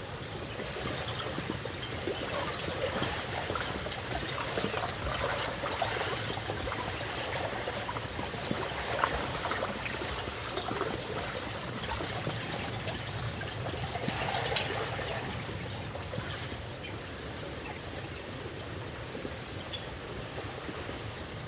Lake Ekoln near Rörviken, Uppsala, Sweden - ten thousand ice shards chiming in the waves
lake Ekoln is full of tiny ice shards, chiming and jingling as they are being washed out on the beach.
recorded with Zoom H2n set directly on a rock, 2CH, windscreen. postprocessed with slight highpass at 80 Hz.